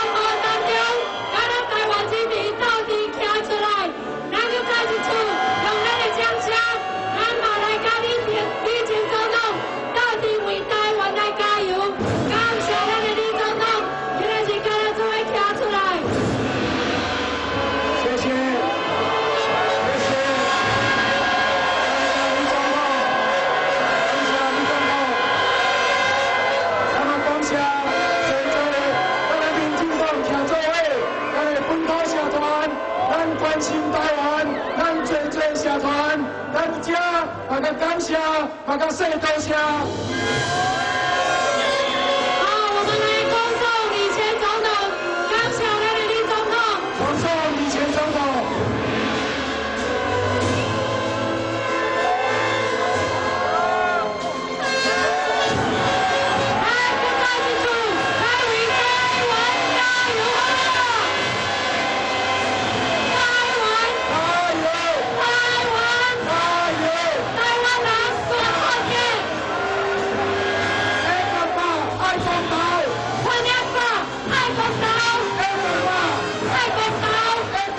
Former president is a speech, Sony ECM-MS907, Sony Hi-MD MZ-RH1
26 June 2010, 17:35